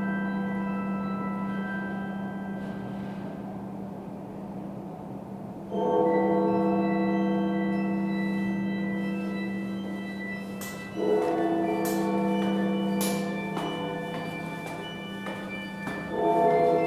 Downtown Commercial, Calgary, AB, Canada - Noon Bells in the Calgary Tower Stairwell
This is a recording of the emergency staircase of the Calgary Tower at Noon, when the Carillon Bells toll and play music. The stairs are next to elevator and the sound of it passing by, through the walls, can be heard.